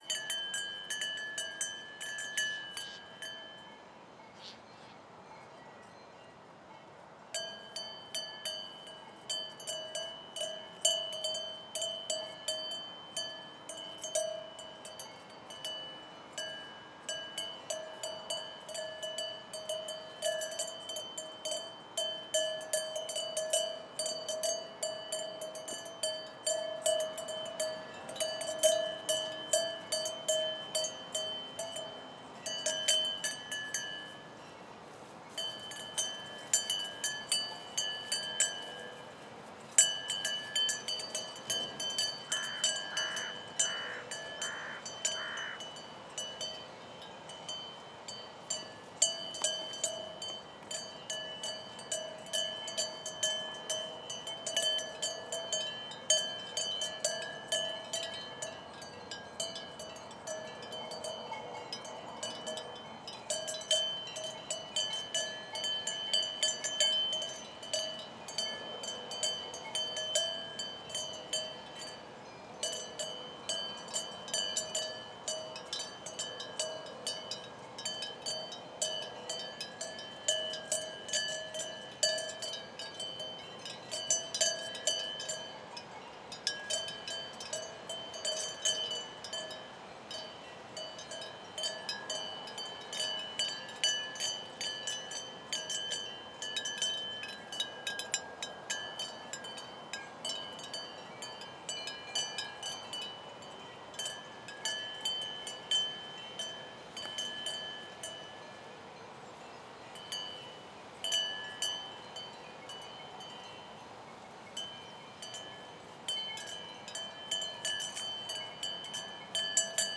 Niederrieden, Deutschland - Cows
Cows, Countryside, bells Traffik noise
Niederrieden, Germany, 7 August 2012, 07:00